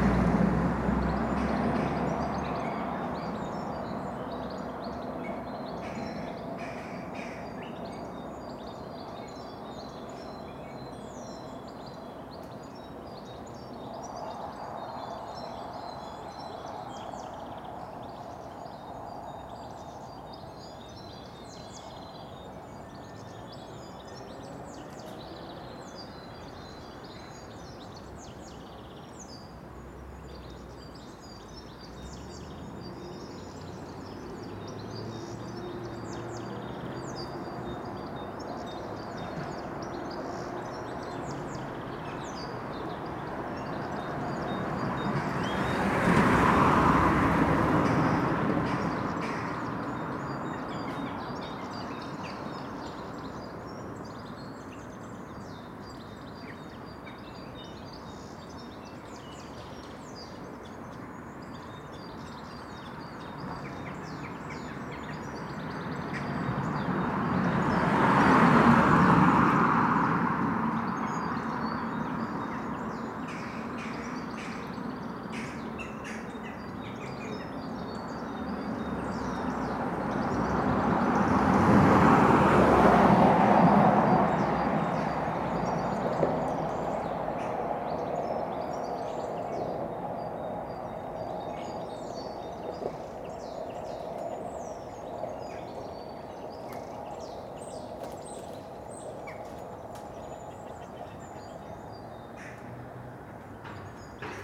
The Poplars High Street Elmfield Road
Under the eaves
the tit goes into its nest
traffic’s passing press
Electric gates and entry systems
from behind a wall
the smell of death
A runner
irritated at the van
parked across the pavement
18 March 2021, 09:24